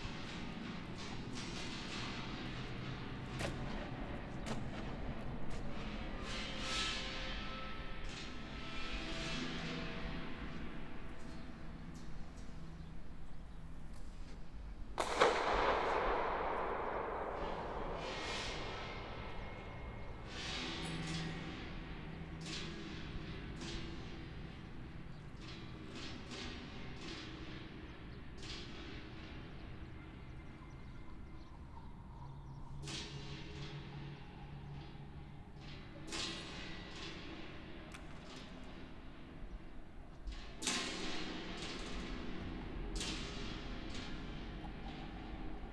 El Maarad, Tarablus, Libanon - oscar niemeyer dome tripoli activation
Activation of the natural acoustics of the 'experimental theater dome' at the Rachid Karami International Fair build designed by legendary Brazilian architect Oscar Niemeyer in 1963.